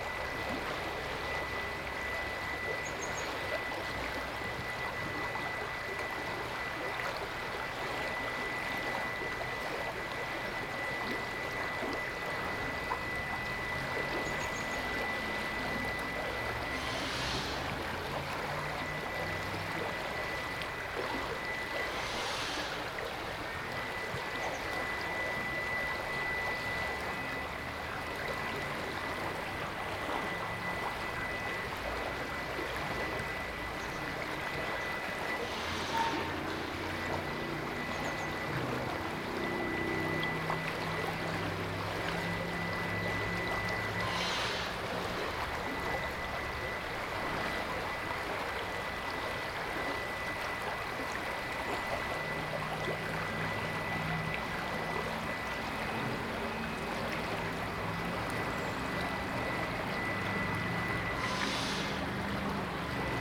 Lindenpl., Bad Berka, Deutschland - River through the city in Spring
A binaural recording.
Headphones recommended for best listening experience.
Anthropophonic phenomena can be observed layered within the space as the river keeps flowing. A few bird life can also be monitored.
Recording technology: Soundman OKM, Zoom F4.
Thüringen, Deutschland